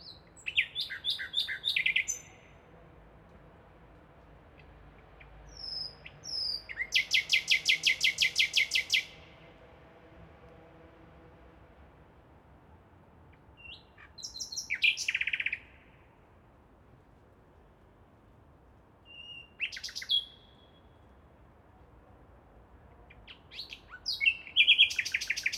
Berlin, Luisengärten - Kreuzberg nightingale
Berlin Kreuzberg, Luisengärten, nightingale. did not expect one here. this little gras land (former berlin wall area) will probably disappear soon because of a housing project.